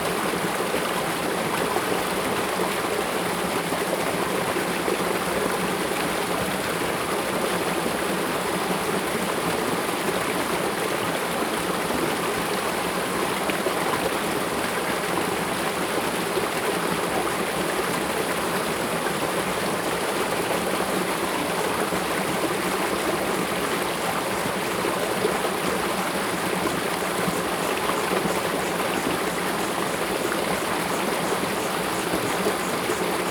頂草南, 埔里鎮桃米里, Taiwan - Sound of water
Sound of water
Zoom H2n MS+XY